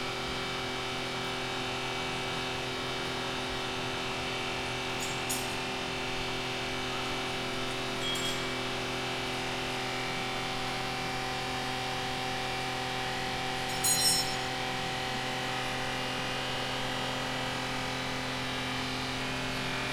Osaka, Tennōji, Shitaderamachi - garage activity
近畿 (Kinki Region), 日本 (Japan), 31 March 2013